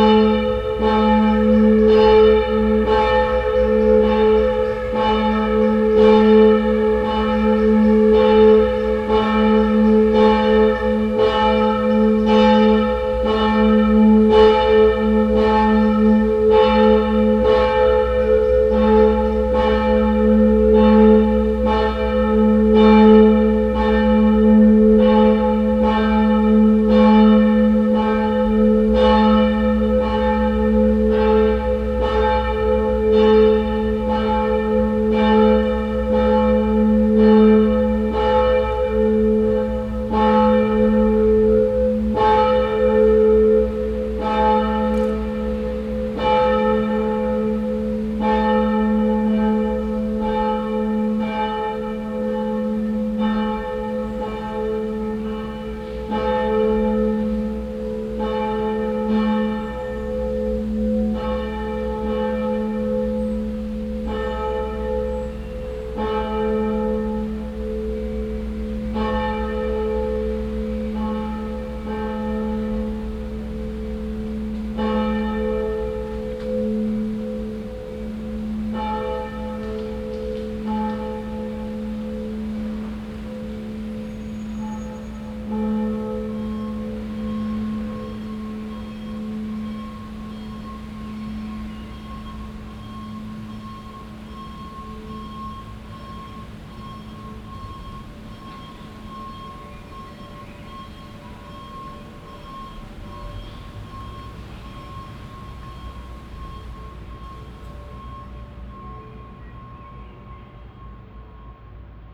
Borbeck - Mitte, Essen, Deutschland - essen, dionysus church, 12 o clock bells
An der Dionysuskirche in Essen Borbeck. Der Klang des Läuten der 12 Uhr Glocken an einem leicht windigem Frühlingstag.
At zje Dionysus church in Eseen Borbeck. The sound of the 12o clock bells at a mild windy sprind day.
Projekt - Stadtklang//: Hörorte - topographic field recordings and social ambiences